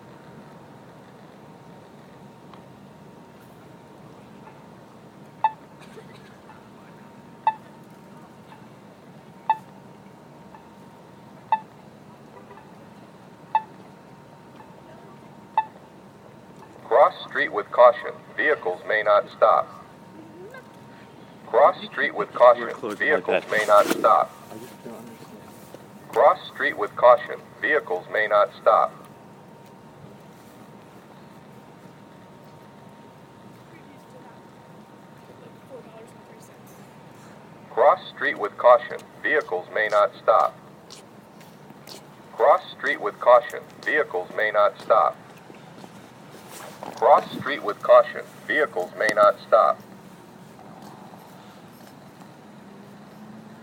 Muhlenberg College Crosswalk, West Chew Street, Allentown, PA, USA - Chew St. Crosswalk
recording of the automated crosswalk that goes between the two sides of chew street. This crosswalk warns pedestrians to be careful when crossing the street